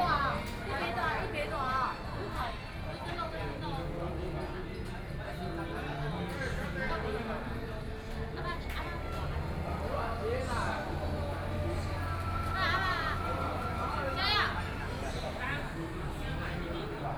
前金區博孝里, Kaoshiung City - In the restaurant
In the restaurant, Traffic Sound